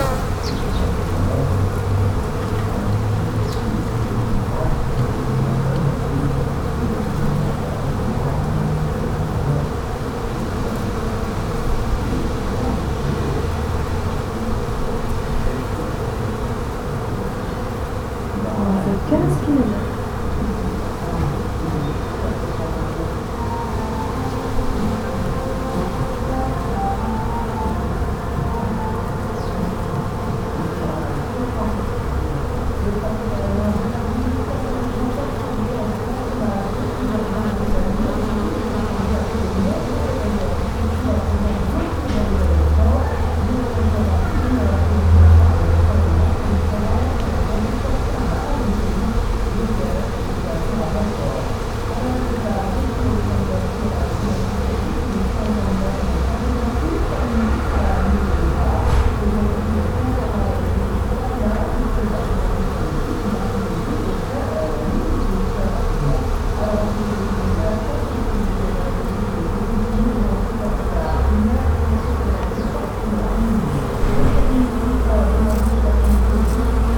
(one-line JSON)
{"date": "2011-07-12 16:53:00", "description": "Florac, Bees & Radio on a balcony.\nAfter the 15 km walk.", "latitude": "44.33", "longitude": "3.59", "altitude": "550", "timezone": "Europe/Paris"}